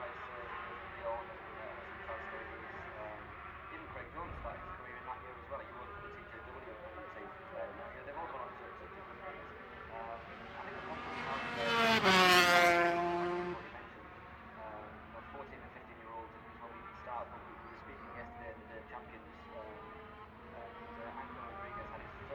Unnamed Road, Derby, UK - British Motorcycle Grand Prix 2004 ... 250 Qualifying ...
British Motorcycle Grand Prix 2004 ... 250 Qualifying ... one point stereo mic to minidisk ... date correct ... time optional ...